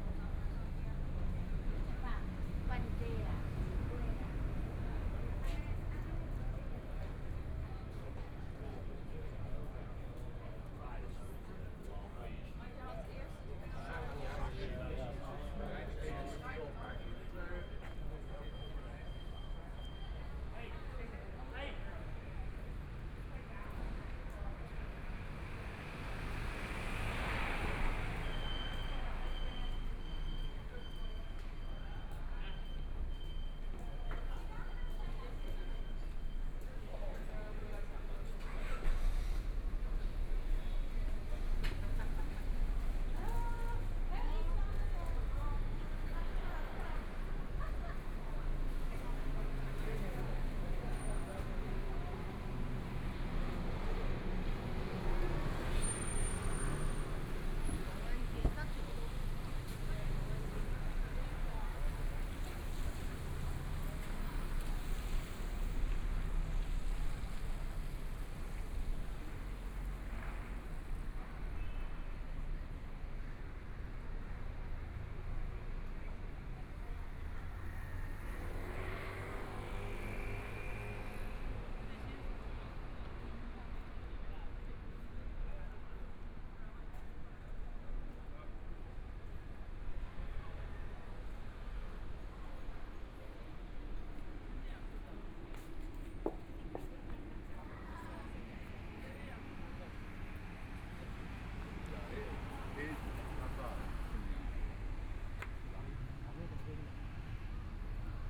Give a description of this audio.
Walking towards the north direction, Traffic Sound, Sound a variety of shops and restaurants, Please turn up the volume a little. Binaural recordings, Zoom 4n+ Soundman OKM II